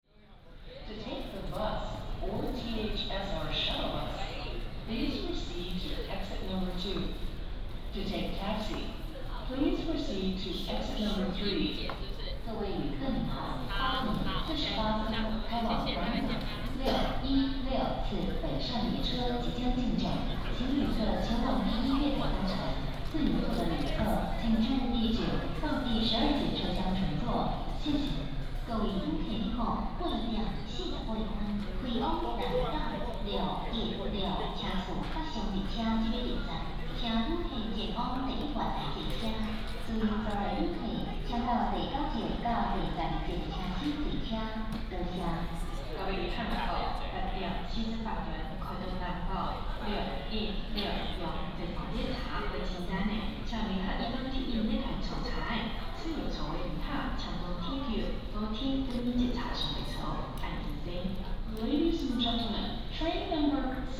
THSR Tainan Station, Guiren District - Walking through the station
From the station platform, To the hall, Go to the station exit